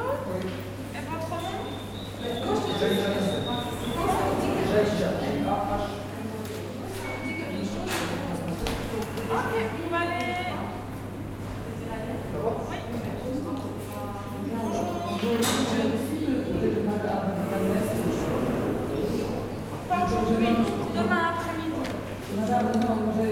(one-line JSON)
{"title": "Namur, Belgique - CPAS reception", "date": "2016-04-19 11:10:00", "description": "The main reception of the CPAS. This is an office helping people who have financial difficulties.", "latitude": "50.45", "longitude": "4.87", "altitude": "80", "timezone": "Europe/Brussels"}